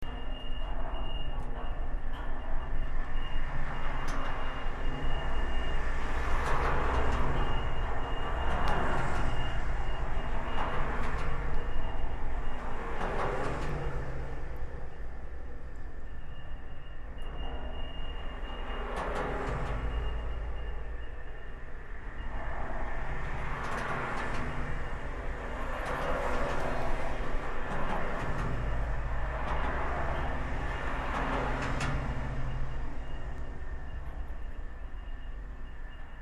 Indooroopilly Bridge, Brisbane (Walter Taylor)